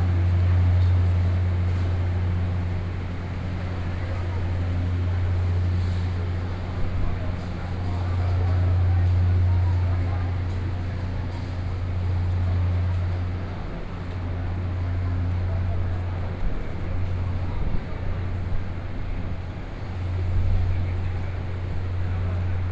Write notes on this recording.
From the station hall, Walking through the underground passage, To the station platform, Zoom H4n + Soundman OKM II